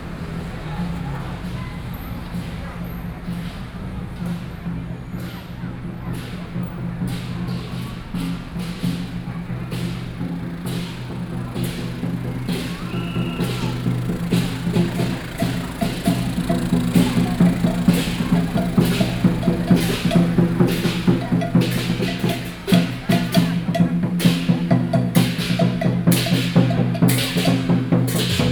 {"title": "Lixin Rd., Yilan City 中正里 - Festival", "date": "2014-07-26 20:33:00", "description": "Road corner, Festival, Traffic Sound\nSony PCM D50+ Soundman OKM II", "latitude": "24.76", "longitude": "121.75", "altitude": "18", "timezone": "Asia/Taipei"}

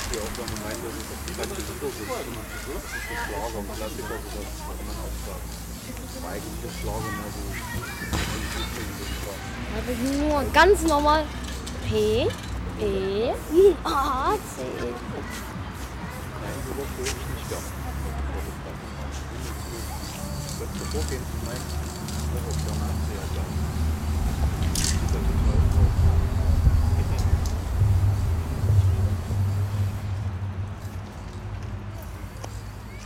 {"title": "gotha, kjz big palais, beim graffitiprojekt - beim graffitiprojekt", "date": "2012-08-08 16:06:00", "description": "das graffitiprojekt übt, im hintergrund verkehr, der bolzplatz und ein großer spielplatz. dosen, caps, schütteln, sprühen...", "latitude": "50.94", "longitude": "10.70", "altitude": "313", "timezone": "Europe/Berlin"}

